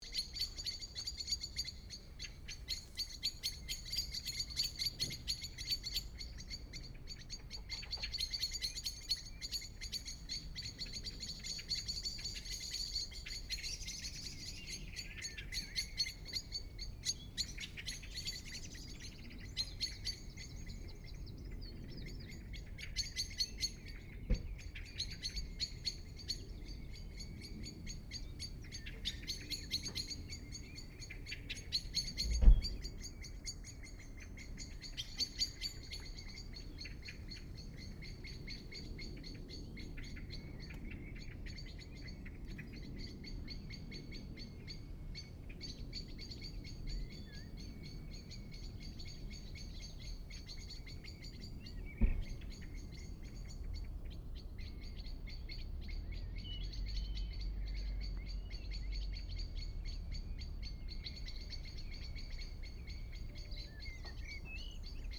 Birds from window in evening. ST350 mic. Stereo decode
United Kingdom, European Union, 25 April 2013